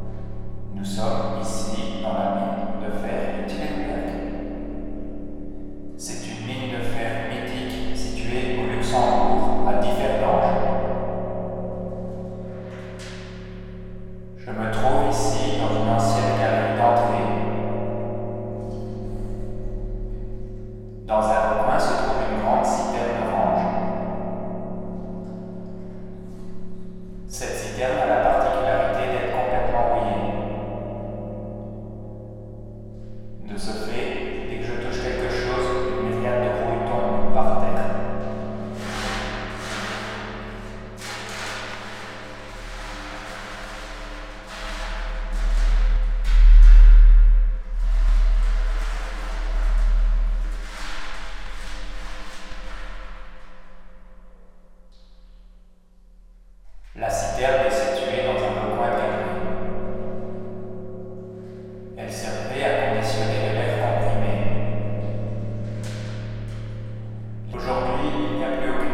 Differdange, Luxembourg - Cistern
It's often interesting to speak in the tubes or the cisterns. Resonance are huge, even more if it's empty. In the abandoned mines, near everything is derelicted, so it's a great playing field. Nothing to say is not a good thing in fact ; you have to say something, even if it's whatever. In first I speak stupid things on a hole on the cistern summit. After, I say other stupids words in a long tube going threw the cistern. I was not sure it was really connected and it was 2 meters high. I had to climb and to do a traction force with arms to speak... It would be really interesting to speak some intelligent things in a place like that.